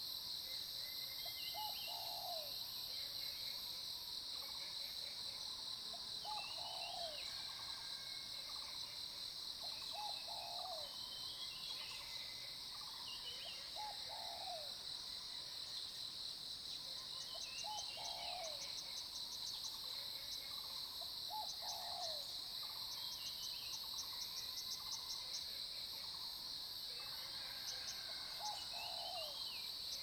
種瓜路4-2號, 桃米里 Puli Township - Early morning
Frogs chirping, Early morning, Bird calls, Cicadas sound, Insect sounds
Zoom H2n MS+XY
Puli Township, Nantou County, Taiwan, 10 June 2015, 05:36